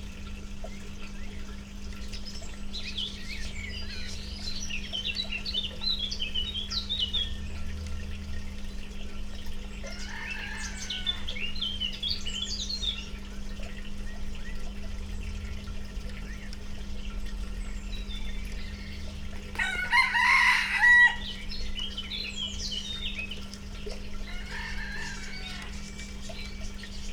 Botanischer Garten, Philosophenweg, Oldenburg - roosters, pidgeons, a water pump
Oldenburg, botanical garden, a place in the shadow behind the bird house, between a aquarium with an Axolotl and the birdhouse. Two roosters communicating.
(Sony PCM D50, Primo EM172)
Oldenburg, Germany